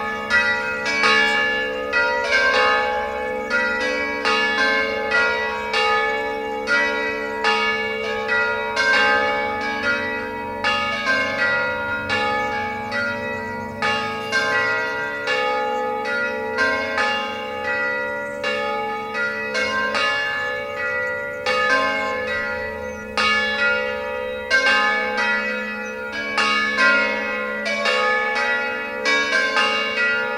Via porta vecchia 1 - Le campane della chiesa Orasso
Edirol R-09HR